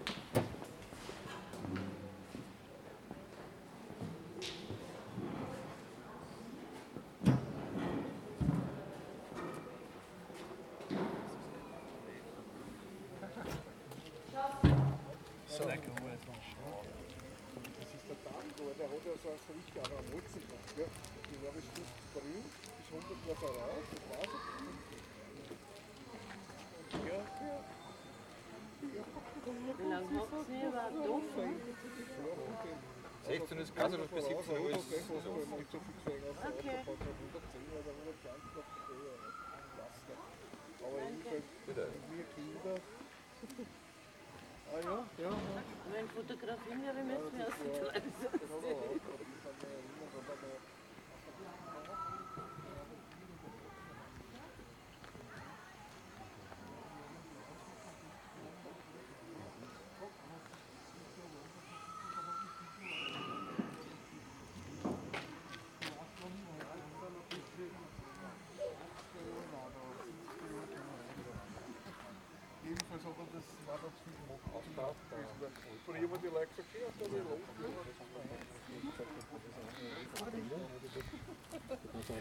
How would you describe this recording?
Eisenbahnmuseum Strasshof: Remise/Depot Railwaymuseum